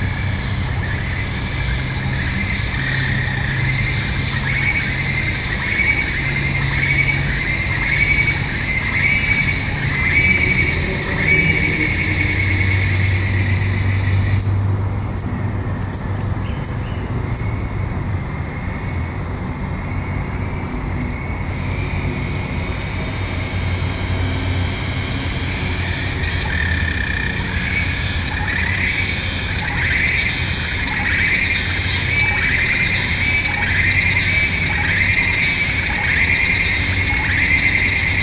Hutan Rekreasi, Melaka, Malaysia - Dusk Chorus at Recreational Forest
Dusk chorus. In the background is the busy road nearby the recreational forest entrance. People are leaving as its approaching dark.